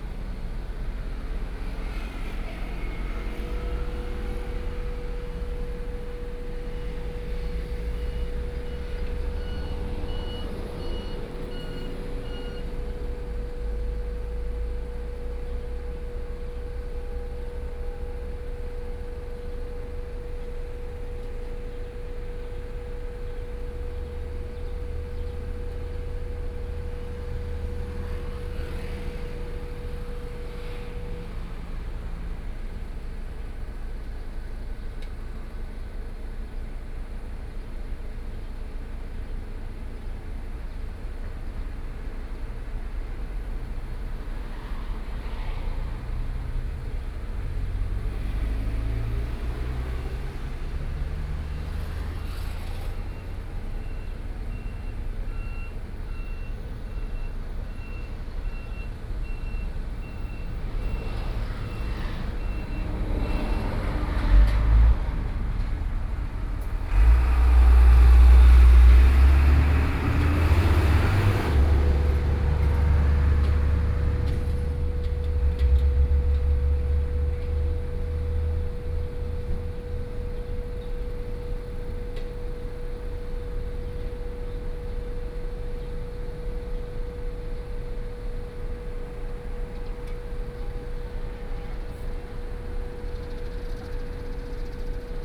{
  "title": "Jishui Rd., Wujie Township - Traffic Sound",
  "date": "2014-07-29 11:18:00",
  "description": "In the convenience store, Traffic Sound, Hot weather",
  "latitude": "24.68",
  "longitude": "121.83",
  "altitude": "2",
  "timezone": "Asia/Taipei"
}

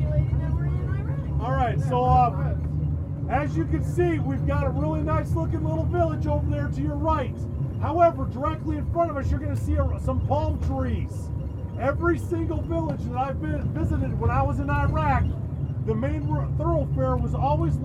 {
  "title": "MCAGCC Twenty Nine Palms, Twentynine Palms, CA, USA - Simulation Iraqi village Twentynine Palms",
  "date": "2012-04-18 10:53:00",
  "description": "Tour guide setting the stage as we approach the simulated Iraqi village named Wadi al-Sahara.",
  "latitude": "34.25",
  "longitude": "-116.02",
  "altitude": "634",
  "timezone": "America/Los_Angeles"
}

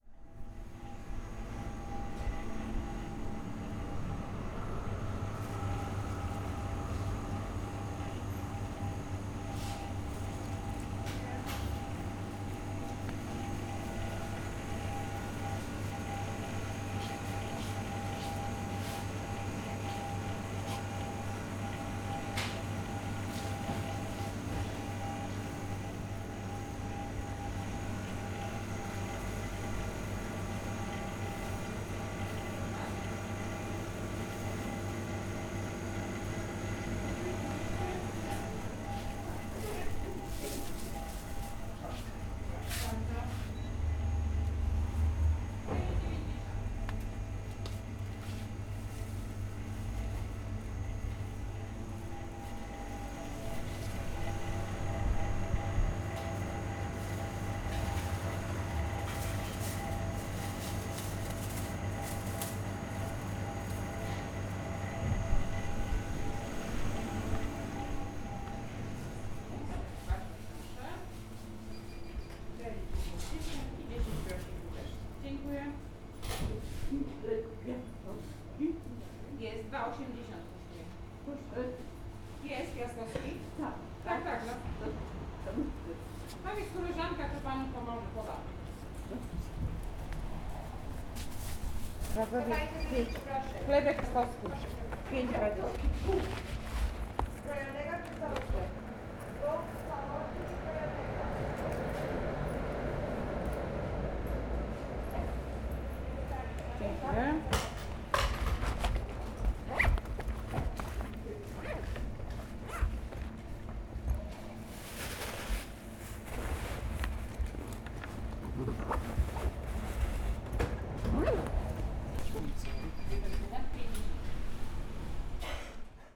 {
  "title": "Poznan, downtow, 23rd May street, grocery store - purchasing a loaf of bread",
  "date": "2012-07-18 11:35:00",
  "description": "spooky old lady talking to the sales person. shop filled with refrigerating machine's drones",
  "latitude": "52.41",
  "longitude": "16.93",
  "altitude": "72",
  "timezone": "Europe/Warsaw"
}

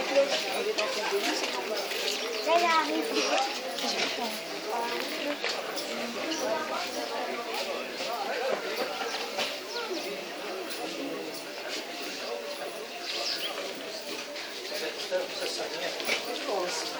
{
  "title": "Kasbah, Essaouira, Morocco - afternoon prayer",
  "date": "2013-10-21 14:30:00",
  "latitude": "31.51",
  "longitude": "-9.77",
  "altitude": "9",
  "timezone": "Africa/Casablanca"
}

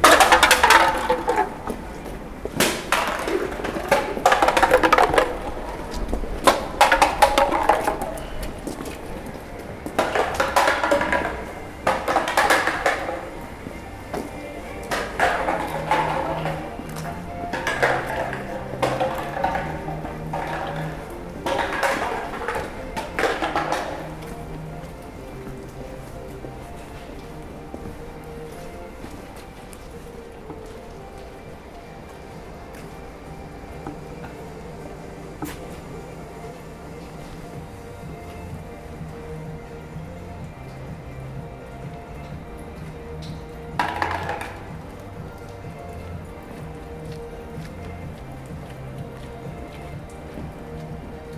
{
  "title": "Universiteitsbuurt, Antwerpen, Belgium - can + music",
  "date": "2017-04-02 21:56:00",
  "description": "XY zoom H4",
  "latitude": "51.22",
  "longitude": "4.40",
  "altitude": "12",
  "timezone": "Europe/Brussels"
}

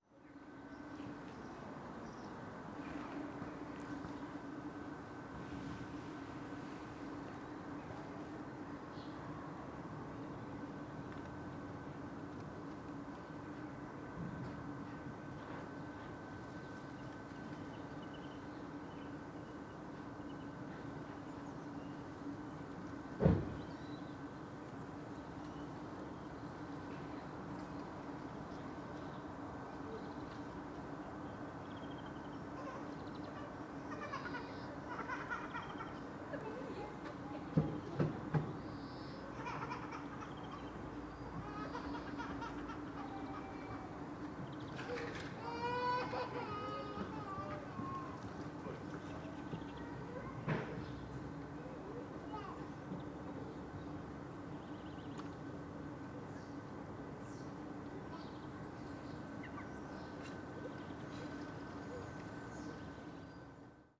{
  "title": "Contención Island Day 69 inner southwest - Walking to the sounds of Contención Island Day 69 Sunday March 14th",
  "date": "2021-03-14 08:54:00",
  "description": "The Drive Leslie Crescent\nBushes soften alleyway bricks\na child cries",
  "latitude": "55.00",
  "longitude": "-1.62",
  "altitude": "67",
  "timezone": "Europe/London"
}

